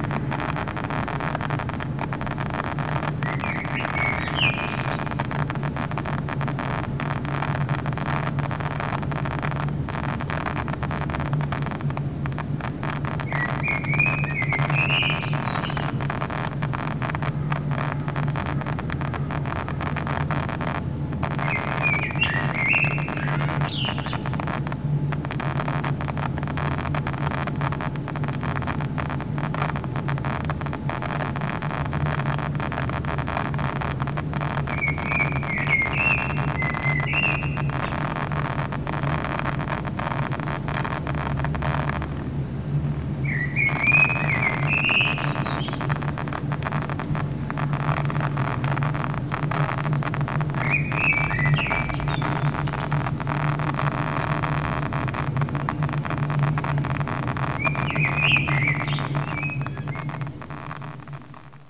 Boulevard Armand Duportal, Toulouse, France - Loud speaker crackling
Drone air conditioner, crackling on the loud speaker, bird